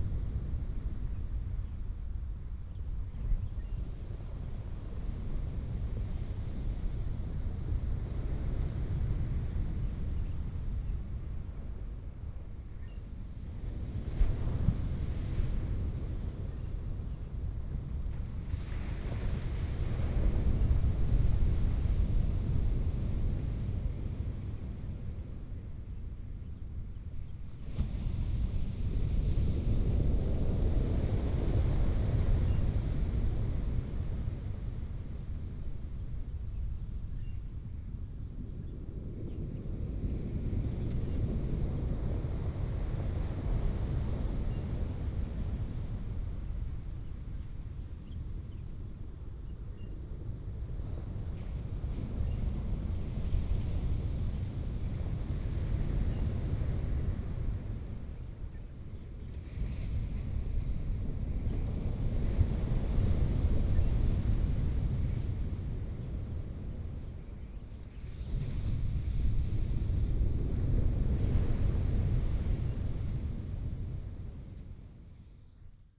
Nantian, Daren Township, Taitung County - Morning seaside
Morning seaside, Bird call, Sound of the waves
28 March 2018, ~08:00